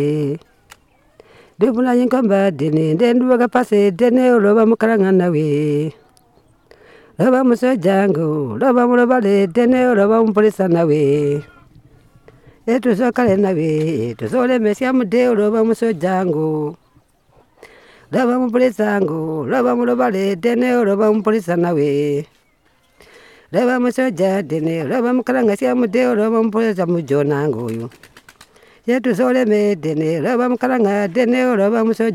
Manjolo, Binga, Zimbabwe - Ester's lullaby for a boy...
Margaret Munkuli records her mum, Ester Munenge singing a lullaby for a boy (...you can hear the little boy's reactions in the background...). Such songs are created by mothers for their children and usually sung while the mother is working, baby on her back, in the fields, fetching water, or working at home. Today the custom is slowly getting lost and it’s mainly the older women who can still sing such songs to please and calm a baby.
a recording from the radio project "Women documenting women stories" with Zubo Trust, a women’s organization in Binga Zimbabwe bringing women together for self-empowerment.